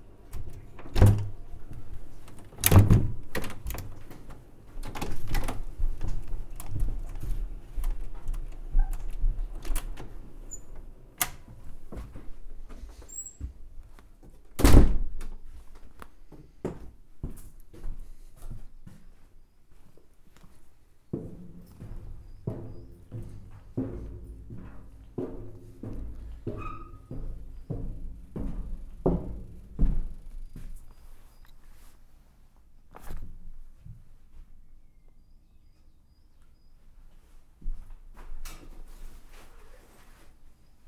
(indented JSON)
{
  "title": "Headington, Oxford, Oxford, UK - Going into the office...",
  "date": "2016-04-12 10:10:00",
  "description": "Several times I have enjoyed the series of sounds I encounter on my way into the office at Headington Hill Hall, from the outdoor open space, via the pitched hum of the entry hall, to the melodic spiral staircase at the end. Recorded with a Roland R-05.",
  "latitude": "51.76",
  "longitude": "-1.23",
  "altitude": "96",
  "timezone": "Europe/London"
}